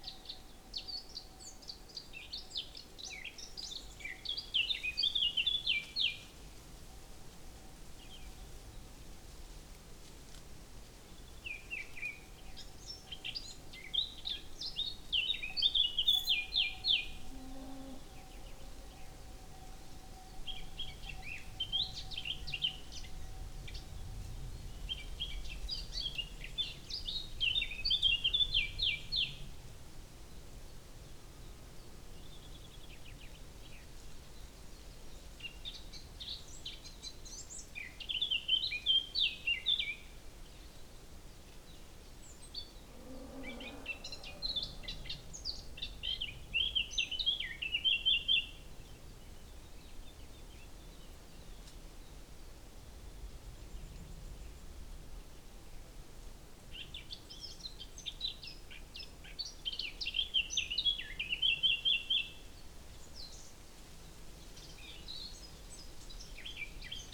Birds and cow bell in the distance.
Lom Uši Pro. MixPre II
Slovenija, 9 July 2022